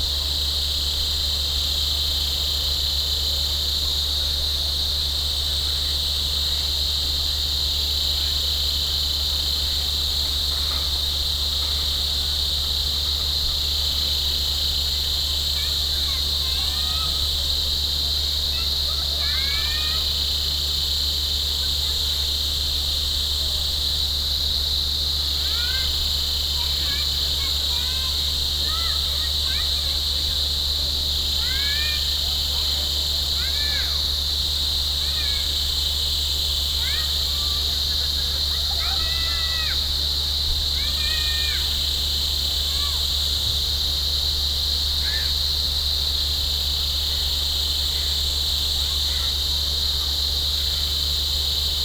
Ludrong Zur Lam 11 NE, Thimphu, Bhutan - Tree Ears Recording of Cicadas
Tree Ears Recording of Cicadas - 2. October 2022 - Recorded with Sonorous Objects SO.1 mics and Centrance Mixerface to iphone.